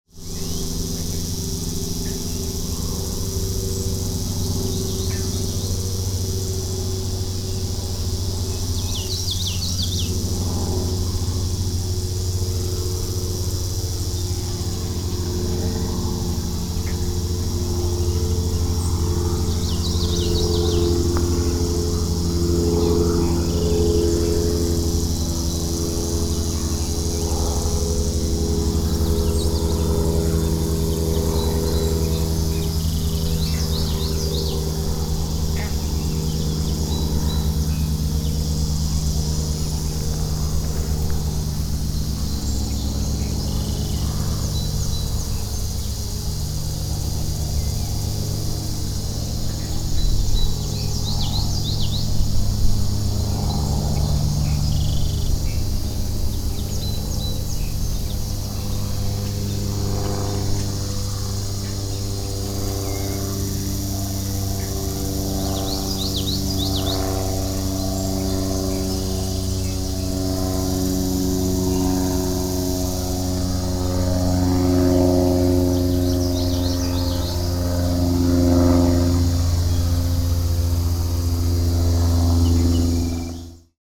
Grass Lake Sanctuary - Insects Near the Pond
A magical spot, as the pathway opens up the scenery to the expansive pond area. Just before you get there, stop and listen to all of the insects singing.
WLD, Phonography, Grass Lake Sanctuary